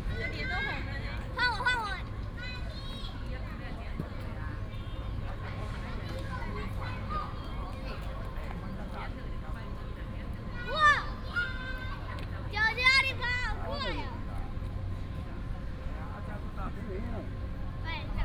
石雕公園, Banqiao Dist., New Taipei City - Children Playground
in the park, Children Playground, Bird calls
Banqiao District, New Taipei City, Taiwan